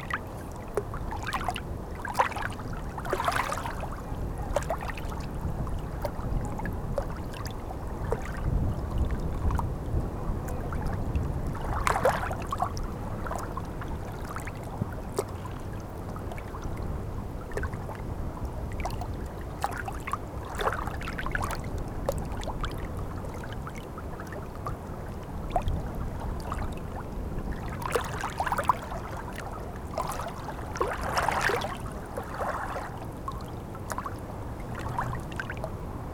La Mailleraye-sur-Seine, France - High tide
Sounds of the Seine river, during the high tide. Water is flowing in the wrong way (going to Paris).